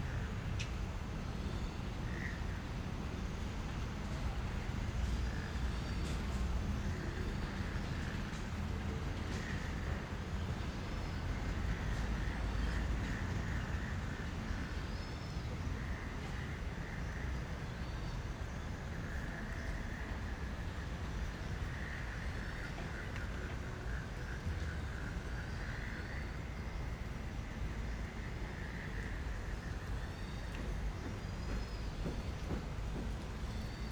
Frogs calling, Rode NT4+Zoom H4n
台北市 (Taipei City), 中華民國, 6 March 2012, 14:36